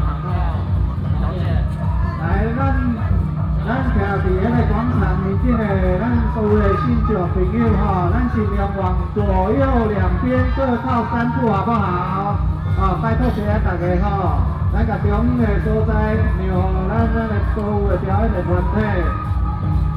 Dajia Jenn Lann Temple, 大甲區大甲里 - In the square of the temple
Temple fair, In the square of the temple